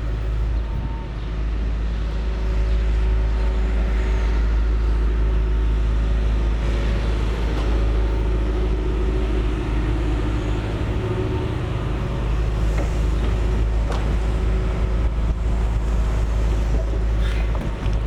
berlin: manitiusstraße - the city, the country & me: construction site for a new supermarket
excavator preparing the ground for a new supermarket
the city, the country & me: april 26, 2012